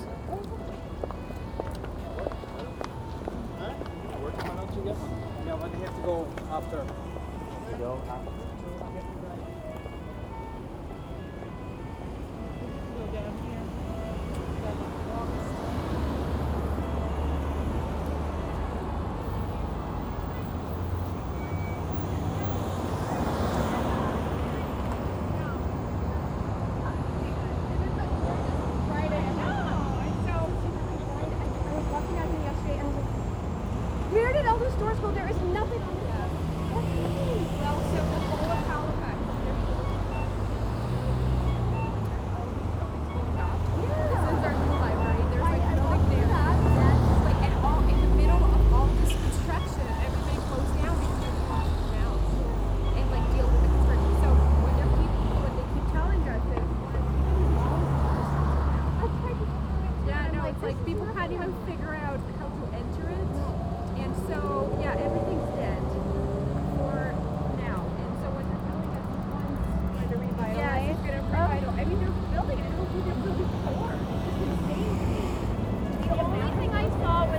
Downtown Halifax, Halifax, NS, Canada - Traffic light tunes
Halifax traffic lights play tunes when they are green for pedestrians to cross. You hear them at many street corners in the center of town.
October 2015